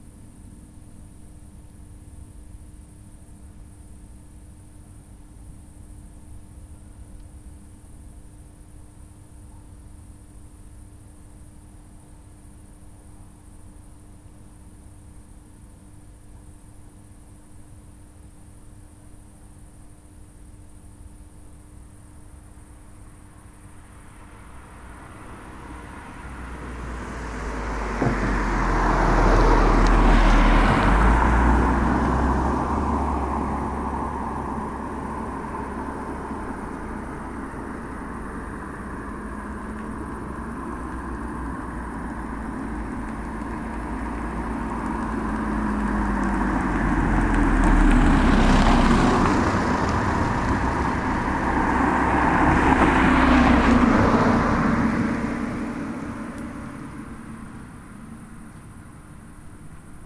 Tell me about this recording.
one minute for this corner: Za tremi ribniki, tranformer